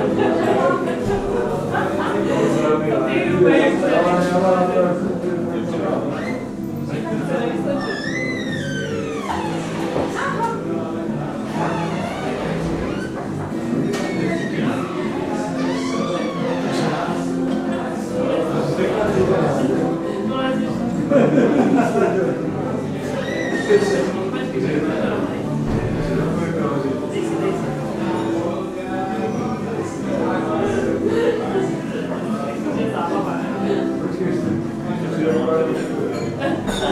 Vlašský dvůr, Dlouhá 32, 38101 Český Krumlov